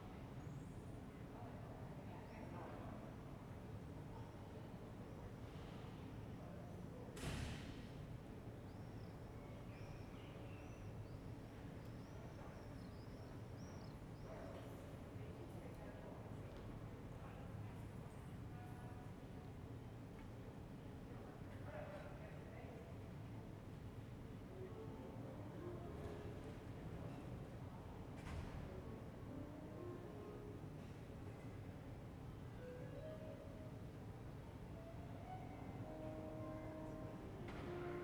"Round seven p.m. terrace with barking Lucy, organ, voices, and bells in the time of COVID19": soundscape.
Chapter CLXXIV of Ascolto il tuo cuore, città. I listen to your heart, city
Friday, June 11th, 2021. Fixed position on an internal terrace at San Salvario district Turin. An electronic organ is playing, the bells ring out and Lucy barks as is her bad habit. More than one year and two months after emergency disposition due to the epidemic of COVID19.
Start at 6:57: p.m. end at 7:35 p.m. duration of recording 36’28”
Piemonte, Italia